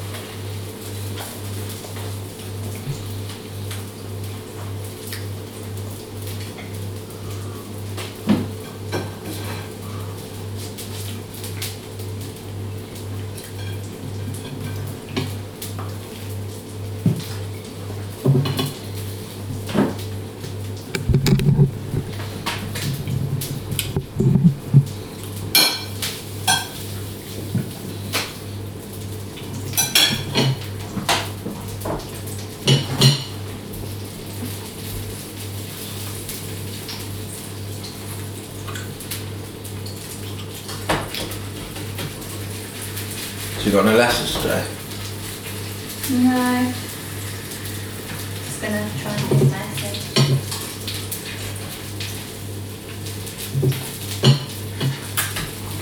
Penryn, Cornwall, UK - Breakfast at St Gluvias st
Sittin in our kitchen, cooking Sausage n egg Sandwiches mmmmm
27 February 2013, 13:12